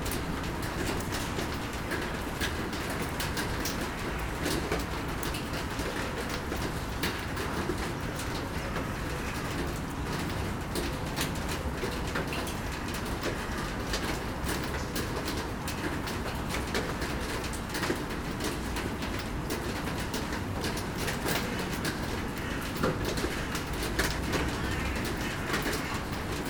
Seraing, Belgique - The coke plant
In the abandoned coke plant, walking in the "tar" section of the factory, while rain is falling. Everything here is dirty and polluted.